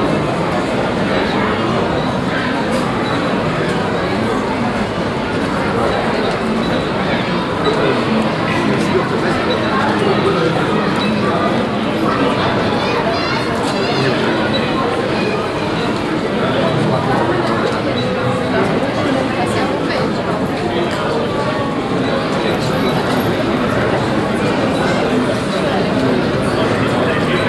Bockenheim, Frankfurt am Main, Deutschland - frankfurt, fair, Torhaus
At the arrival zone of the fair. The sound of people talking, suitcases on rollers, announcements and the fair radio in the morning time.
soundmap d - social ambiences and topographic field recordings